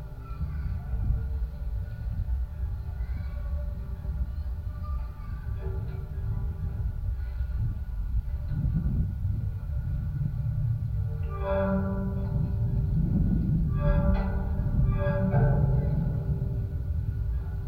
Kaliningrad, Russia, singing railings
contact microphones on the railings